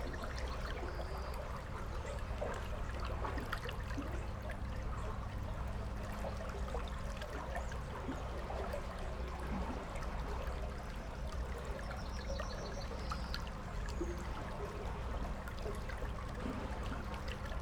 Utena, Lithuania, flooded river
after heavy rains during the last days our rivers are full of waters just like in springtime. 4 channels recording capturing the soundscape of the flooded river. 2 omnis and 2 hydrophones
16 July 2017